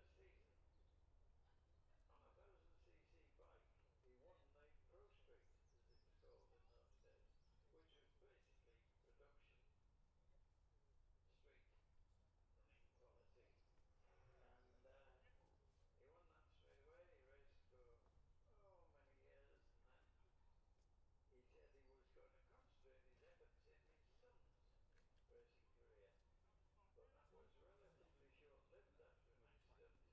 {"title": "Jacksons Ln, Scarborough, UK - olivers mount road racing ... 2021 ...", "date": "2021-05-22 12:11:00", "description": "bob smith spring cup ... twins group A qualifying ... dpa 4060s to MixPre3 ...", "latitude": "54.27", "longitude": "-0.41", "altitude": "144", "timezone": "Europe/London"}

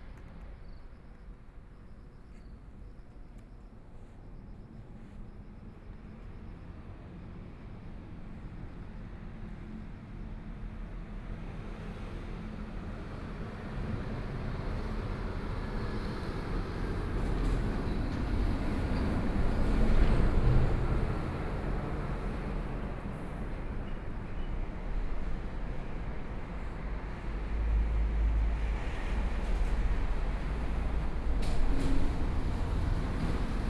{"title": "五結鄉鎮安村, Yilan County - Trains traveling through", "date": "2014-07-27 14:08:00", "description": "Below the railroad tracks, Hot weather, Traffic Sound, Trains traveling through\nSony PCM D50+ Soundman OKM II", "latitude": "24.71", "longitude": "121.77", "altitude": "9", "timezone": "Asia/Taipei"}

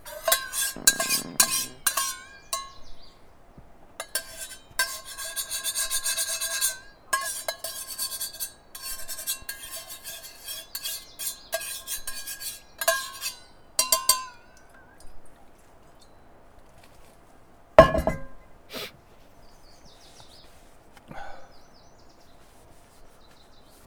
{"title": "neoscenes: clean-up after dinner", "date": "2010-05-13 19:07:00", "latitude": "40.52", "longitude": "-108.99", "altitude": "1548", "timezone": "US/Mountain"}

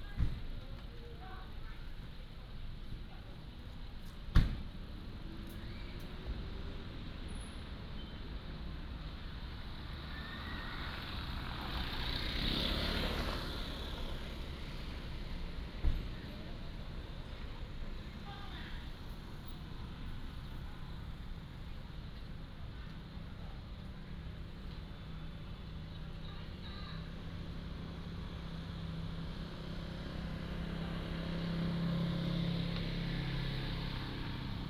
{"title": "Datong Rd., Tongluo Township - Station square", "date": "2017-02-16 10:12:00", "description": "Station square, Traffic sound, The train passes through", "latitude": "24.49", "longitude": "120.79", "altitude": "157", "timezone": "GMT+1"}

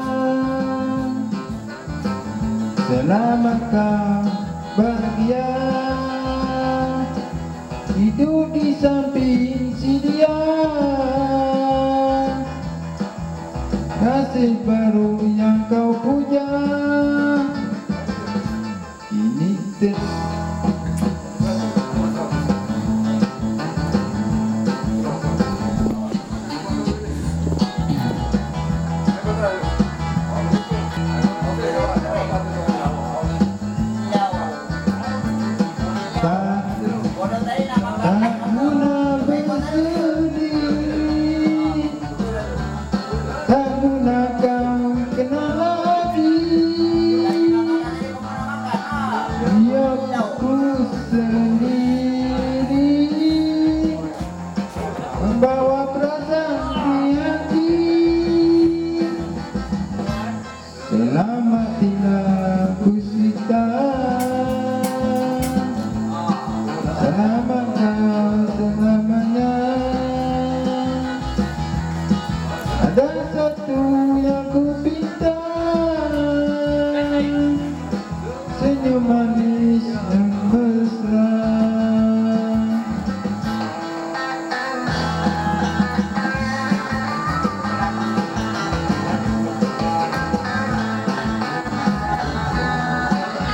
{"title": "Unnamed Road, Pekan, Pahang, Maleisië - kareoke a gogo", "date": "2006-01-12 15:14:00", "description": "all day long this small shop/canteen and surroundings are terrorized by local talent.", "latitude": "3.43", "longitude": "102.92", "altitude": "64", "timezone": "Asia/Kuala_Lumpur"}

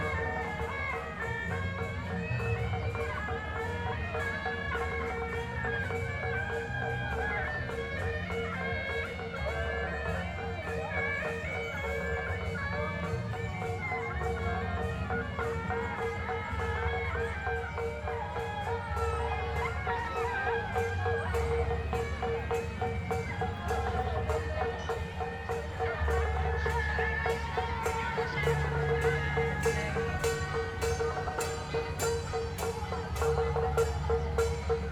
{"title": "三芝區新庄里, New Taipei City - Traditional temple festival parade", "date": "2012-06-25 12:47:00", "description": "Traditional temple festival parade\nZoom H4n+Rode NT4 ( soundmap 20120625-37 )", "latitude": "25.27", "longitude": "121.51", "altitude": "31", "timezone": "Asia/Taipei"}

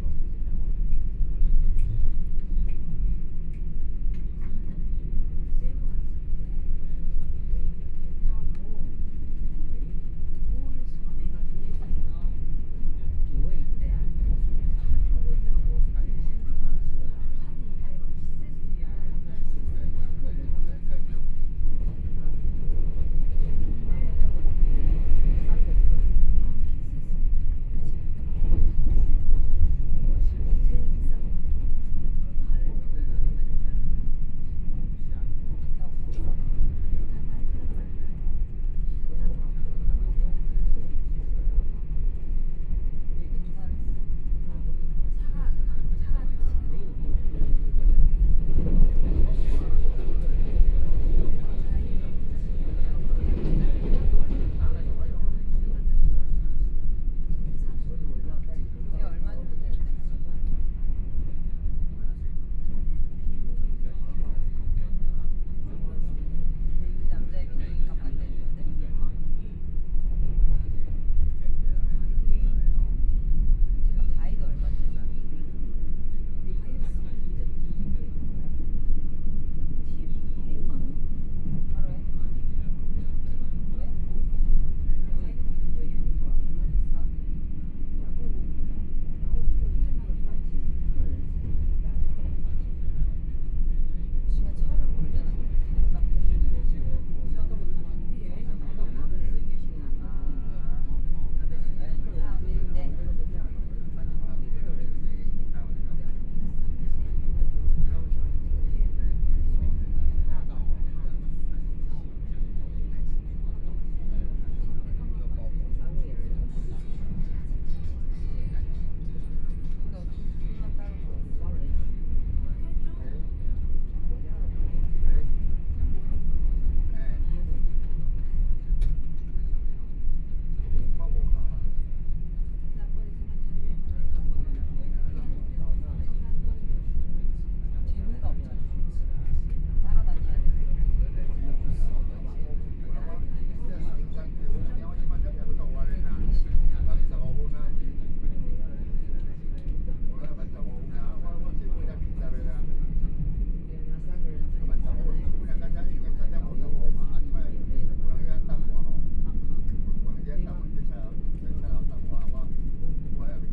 {"title": "Xincheng Township, Hualien County - North-Link Line", "date": "2013-11-05 11:11:00", "description": "Tze-Chiang Train, North-Link Line, Binaural recordings, Zoom H4n+ Soundman OKM II", "latitude": "24.04", "longitude": "121.60", "altitude": "25", "timezone": "Asia/Taipei"}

